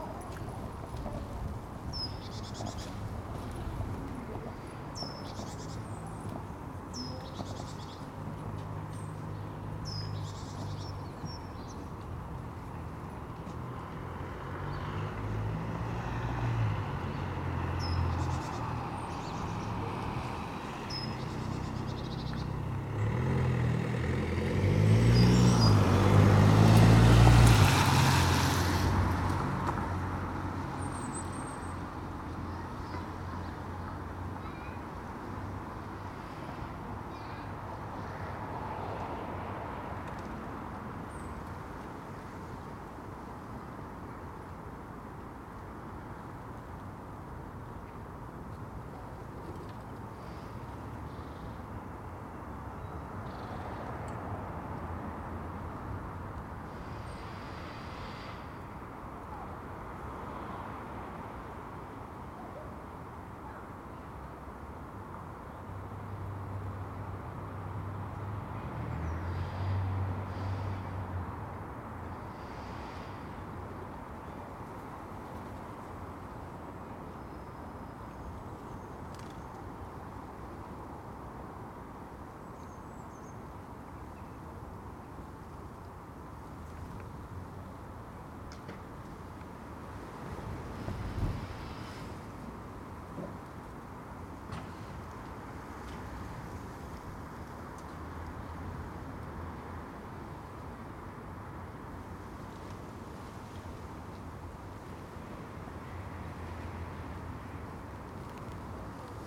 Contención Island Day 33 outer northwest - Walking to the sounds of Contención Island Day 33 Saturday February 6th
The Drive Westfield Drive Parker Avenue Brackenfield Road Meadowfield Road Brierfield Road
At a crossroads
pigeons surf the gusting wind
spilling across the skyline
Rooftop perched
pigeons
magpie
ariels substitute for tree tops
England, United Kingdom, 6 February 2021, 10:47